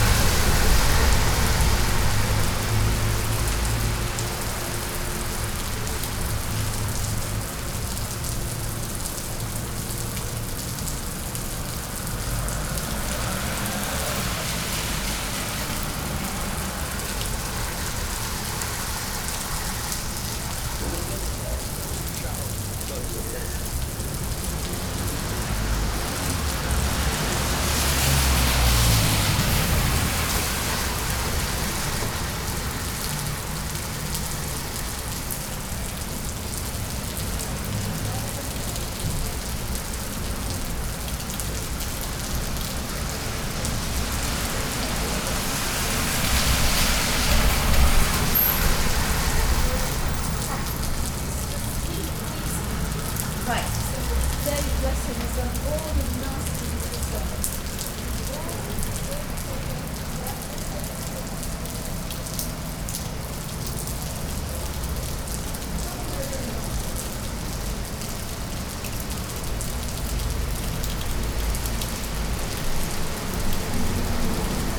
Reading, Reading, Reading, UK - A Conversation Space
Waiting for a meeting, I stood in the porch entrance of the old Bagel Shaq (which is currently a 'Conversation Space' for artists to work collaboratively) and became engrossed in the sounds of rain and water outside. Recorded on a Tascam DR-05 using the built-in mics.